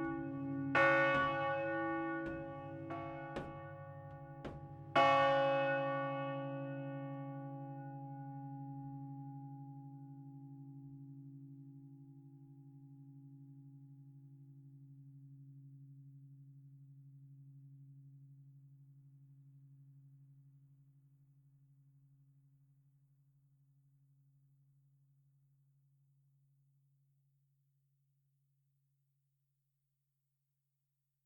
Rue de l'Église, Longny les Villages, France - Longny-au-Perche au Perche - Église St-Martin
Longny-au-Perche au Perche (Orne)
Église St-Martin
Le Glas